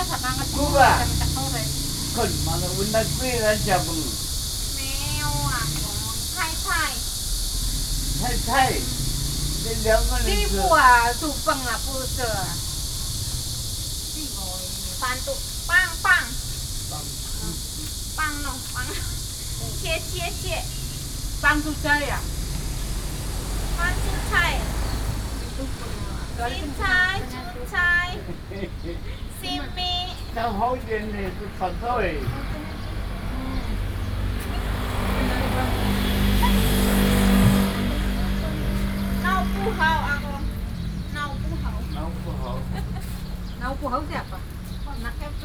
Shanjia, New Taipei City - Chat
Women workers （Work taking care of the elderly in Taiwan）from abroad chatting, Sony PCM D50 + Soundman OKM II
桃園縣 (Taoyuan County), 中華民國, June 2012